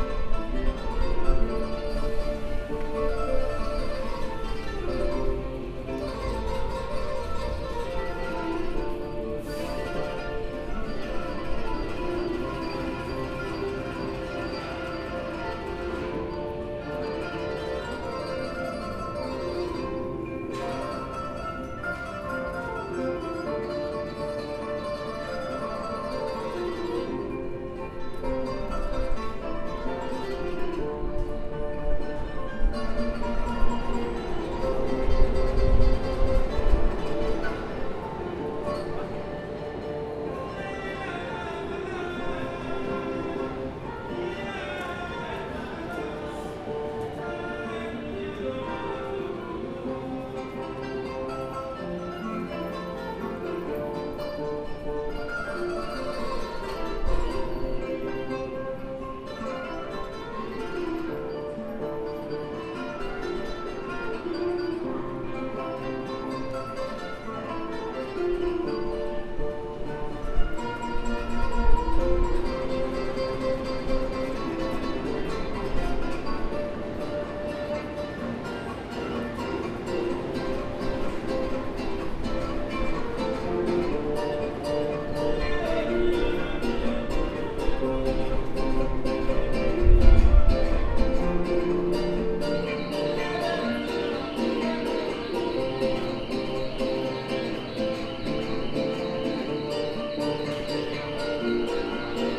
Union Square, New York, Subway Station
Béla NYC Diary, two Afro-American musicians playing in between the stairways.